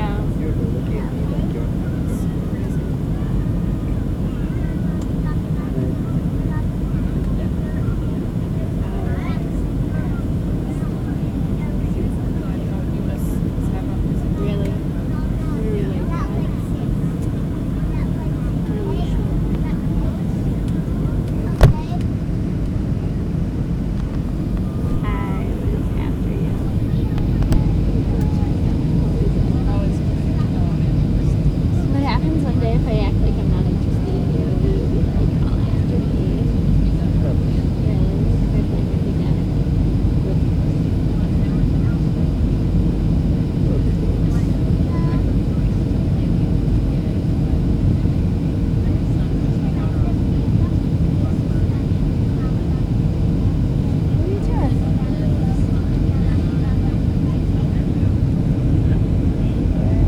Airplane...about to lift off from Orlando.